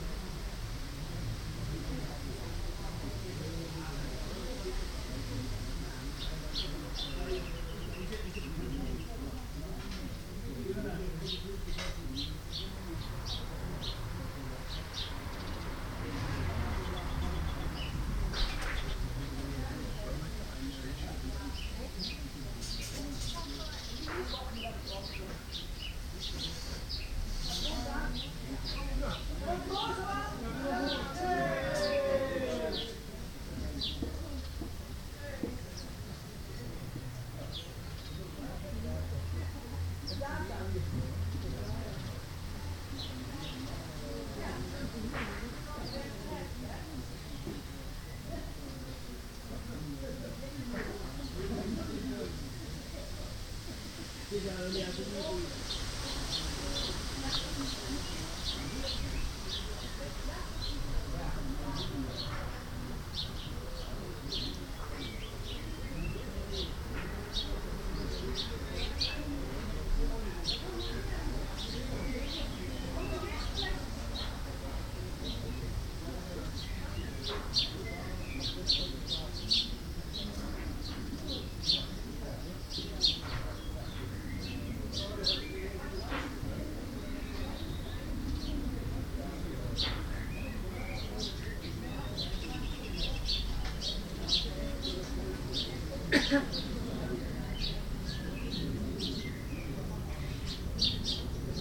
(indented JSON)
{
  "title": "Haaksbergen, Nederland - In the backyard 1",
  "date": "2012-05-26 16:06:00",
  "description": "Birds, wind and neighbours chatting in the backyard of my parents house.\nZoom H2 recorder with SP-TFB-2 binaural microphones.",
  "latitude": "52.16",
  "longitude": "6.73",
  "altitude": "24",
  "timezone": "Europe/Amsterdam"
}